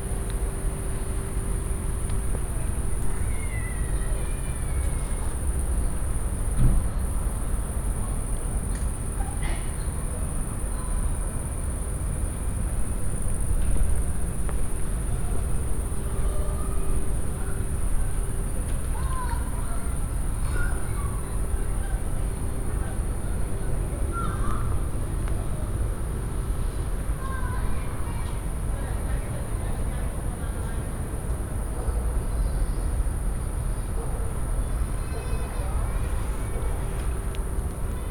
Poznan, Soobieskiego housing estate - eveing ambience
(binaural) evening summer ambience around huge appartment buidlings. cicadas drone togheter with air conditioners and fans. someone is having wild sex. parents putting their kids to sleep, kids protesting. here and there man talking to each other sitting on the bench and having a beer. fright train hauling a few hundred meters from here.